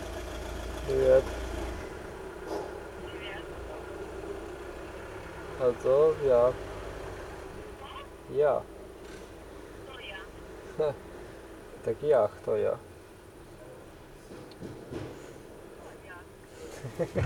Vyšné Nemecké, Slovakia - Crossing into Ukraine
Waiting at the Slovak-Ukrainian border with a guy from Uzhhorod. Binaural recording.